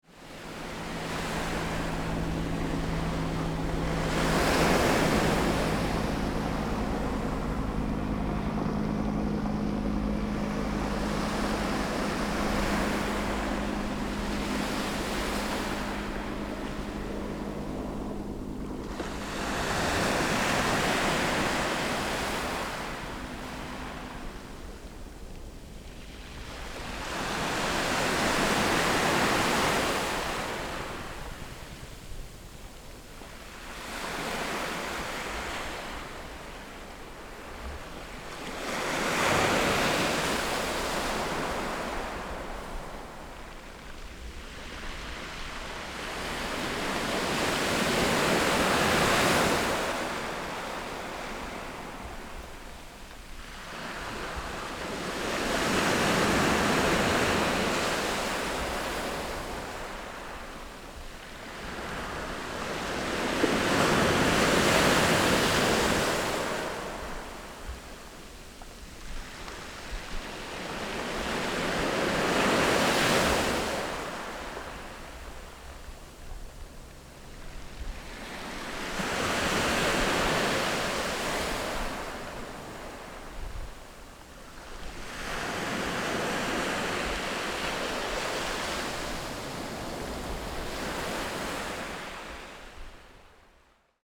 Taitung County, Taiwan - Waves and helicopters
The weather is very hot, Sound of the waves, Waves and helicopters
Zoom H6 XY +NT4